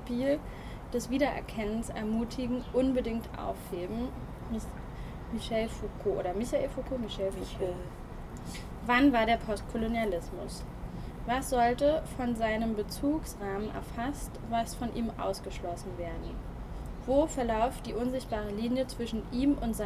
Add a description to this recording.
The reading group "Lesegruppedololn" reads texts dealing with colonialism and its consequences in public space. The places where the group reads are places of colonial heritage in Berlin. The Text from Stuart Hall „When was postcolonialism? Thinking at the border" was read on the rooftop oft he former „ Afrika Haus“ headquarters of the German Colonial Society.